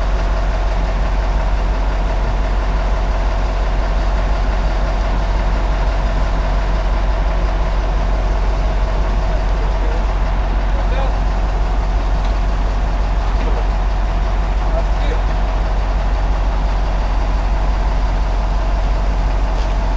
Heraklion Airport, Heraklion, Crete, parking lot for buss - lows of a bus
vacationer getting on coach buses. asking the drivers to which hotels they are going. people walking in front of the microphones, gating the high frequencies. interesting, human high pass filter. full low end coming from the bus engine